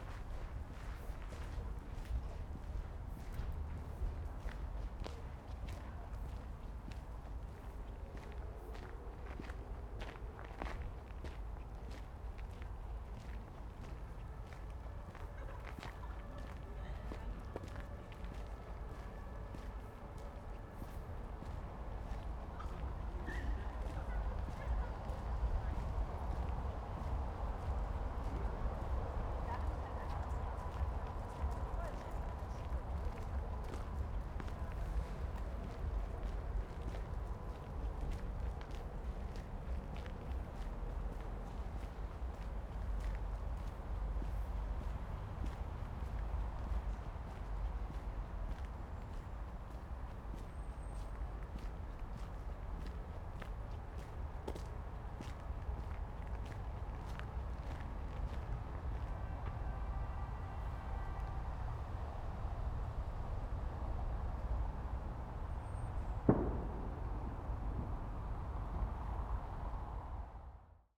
Legiendamm, Berlin, Deutschland - engelbeckenwalk
a walk from Dragon Fountain to Indian Fountain in the direction of the Angel Pool and pass under the Waldemar Road